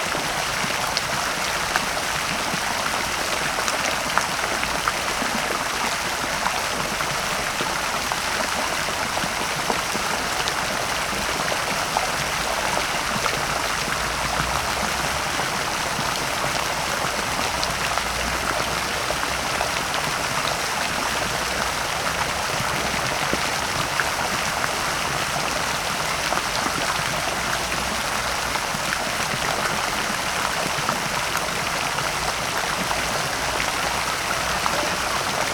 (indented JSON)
{
  "title": "Śródmieście Północne, Warszawa - Fontanna Kinoteka",
  "date": "2013-08-21 09:38:00",
  "description": "8b Fontanna Kinoteka w Palac Kultury i Nauki, Plac Defilad, Warszawa",
  "latitude": "52.23",
  "longitude": "21.01",
  "altitude": "112",
  "timezone": "Europe/Warsaw"
}